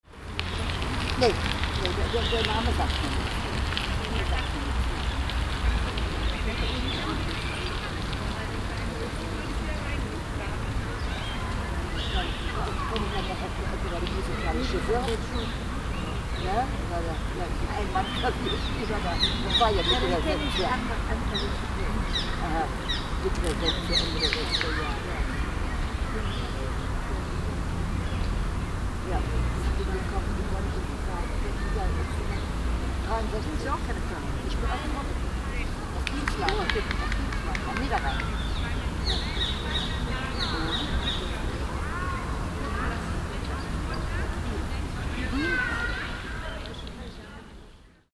cologne, stadtgarten, parkbank, park mitte
stereofeldaufnahmen im september 07 mittags
project: klang raum garten/ sound in public spaces - in & outdoor nearfield recordings
2 May 2008, 15:30